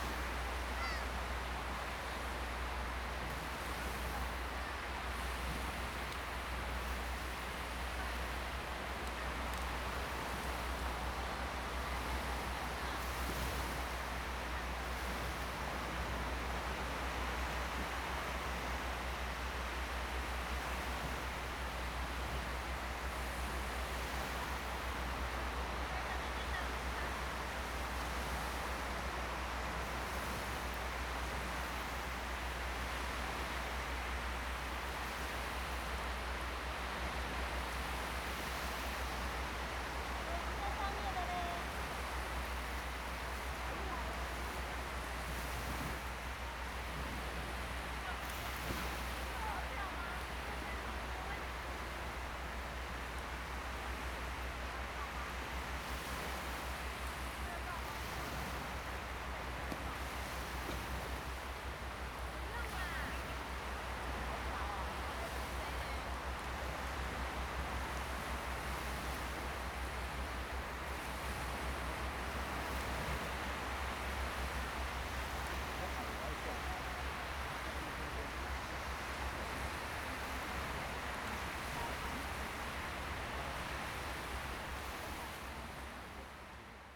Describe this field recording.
Tourists, Sound of the waves, At the beach, Zoom H2n MS +XY